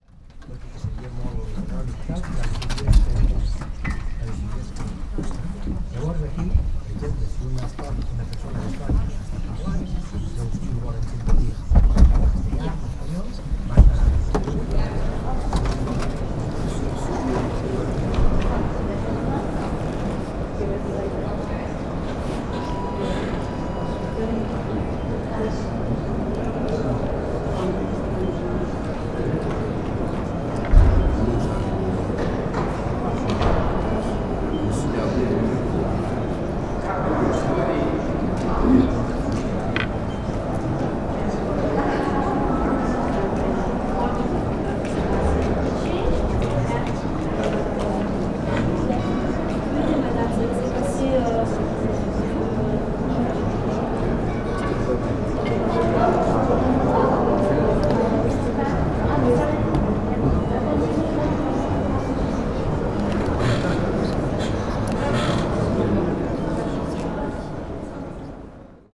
Brussels, European Parliament
A walk in the parliament, at the hemicycle then near the confluence sculpture.